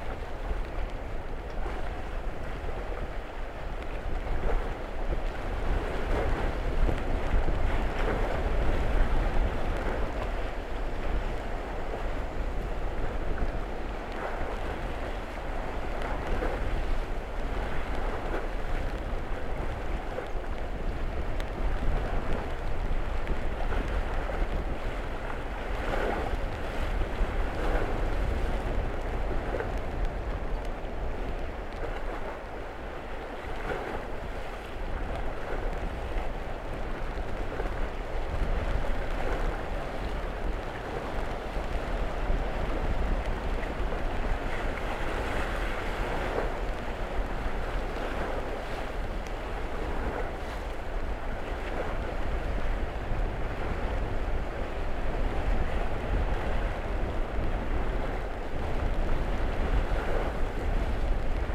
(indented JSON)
{
  "title": "Three Pines Rd., Bear Lake, MI - No More Ice",
  "date": "2016-03-16 13:50:00",
  "description": "The wind lashes the surface of Bear Lake, whose ice finally went out at some point in the last 12 hours. A noisy end to a mild winter. Water droplets from the churning are heard hitting the custom-built windscreen cage (wire and plastic mesh, foam panels added). Mic itself has three layers of foam/fabric windscreen. Stereo mic (Audio-Technica, AT-822), recorded via Sony MD (MZ-NF810, pre-amp) and Tascam DR-60DmkII.",
  "latitude": "44.44",
  "longitude": "-86.16",
  "altitude": "238",
  "timezone": "America/Detroit"
}